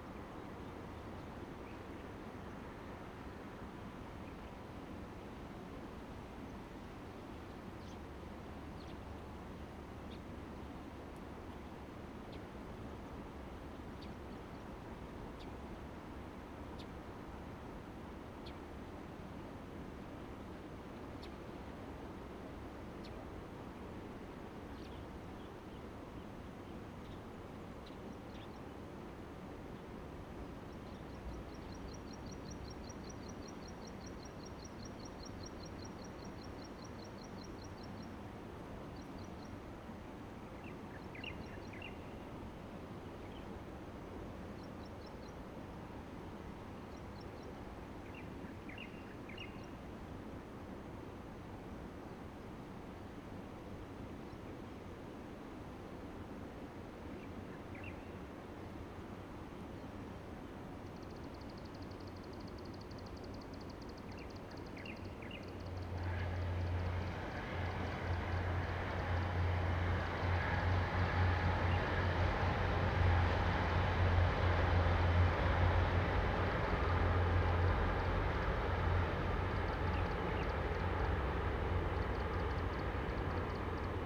太麻里溪, 溪頭 太麻里鄉 - On the river bank
stream sound, On the river bank, The distant train travels through, Dog barking, Bird call
Zoom H2n MS+XY
Taitung County, Taiwan